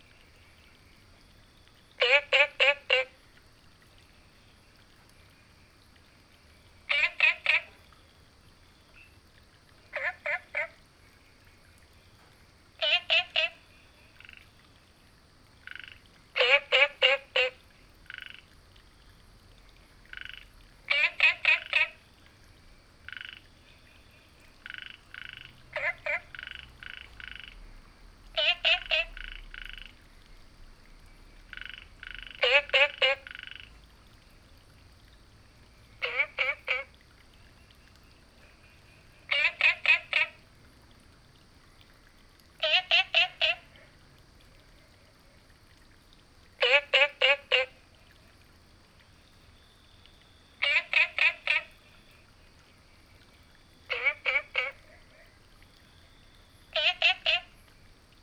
Green House Hostel, Puli Township - Ecological pool
Ecological pool, Frog chirping